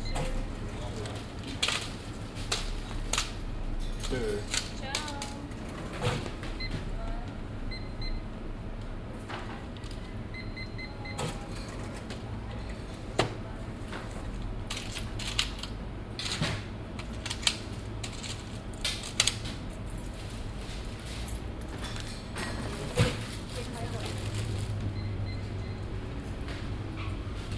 cologne, south, chlodwigplatz, sb bäckerei
soundmap: cologne/ nrw
sb bäckerei am chlodwigplatz, nachmittags
project: social ambiences/ listen to the people - in & outdoor nearfield recordings